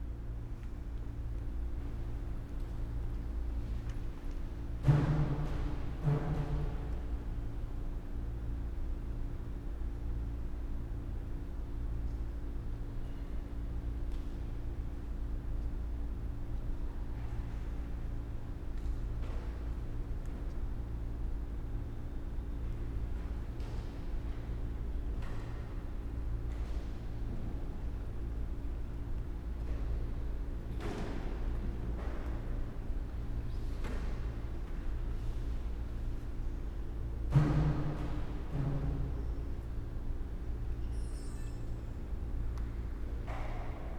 *Best with headphones* : Respectful whispers, cell phones, camera shutters, creaky pews. Was *praying* that the very loud air conditioner would shut off, but it was 104 degrees Fahrenheit..
CA-14(quasi binaural) > Tascam DR100 MK2